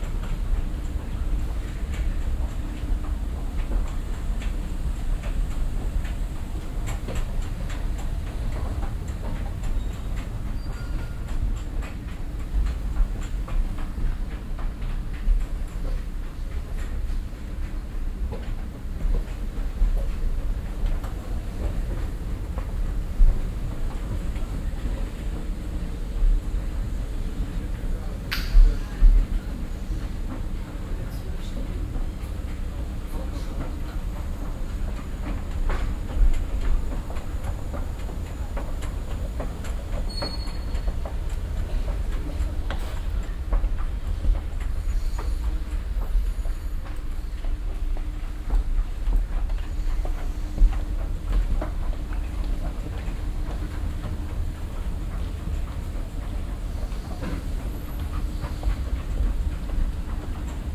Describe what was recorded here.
soundmap: köln/ nrw, rolltreppen, schritte, einfahrt und ansage der strassen/ u bahn linie 15, morgens, project: social ambiences/ listen to the people - in & outdoor nearfield recordings